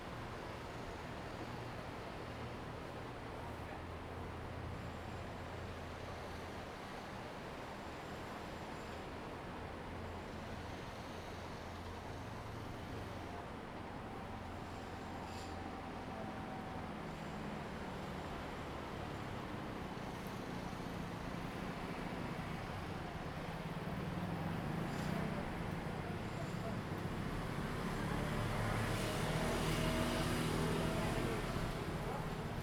sound of the waves, Traffic Sound, In the vicinity of the fishing port
Zoom H2n MS+XY